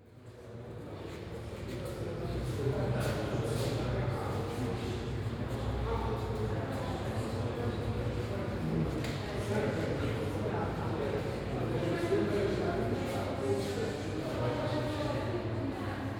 osiedle Jana III Sobieskiego - crammed ticket counters
a hollow, concrete ticket counter hall at the Sobieskiego bus depot. swirling lines of people waiting for their new, electronic ticket card. impatient conversation slur in the high space.
Poznan, Poland, 16 July 2014, ~15:00